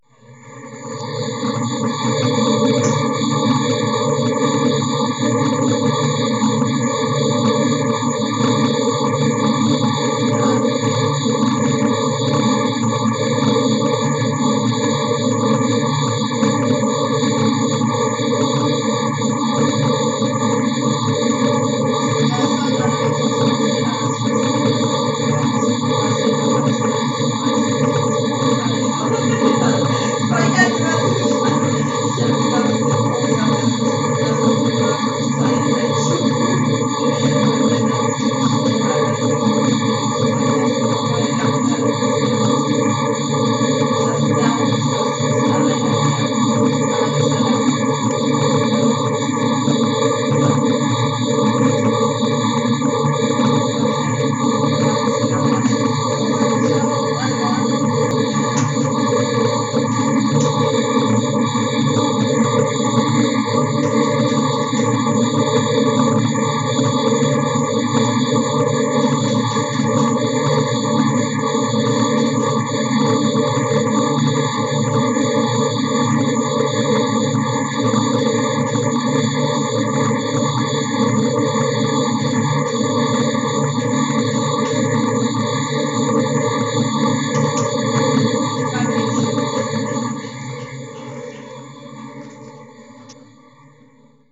tondatei.de: lautsprechertest testton
test, alarm, testfrequenz, funktionstest, funkhaus deutschlandfunk